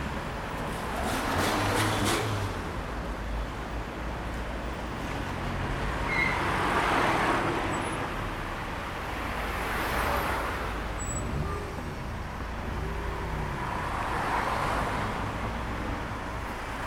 Dolny Sopot, Sopot, Polska - Street lights
One of the busiest intersections in Sopot. You can hear the street crossing melody for the blind. Recorded with Zoom H2N.
Sopot, Poland, 30 August 2013, 13:18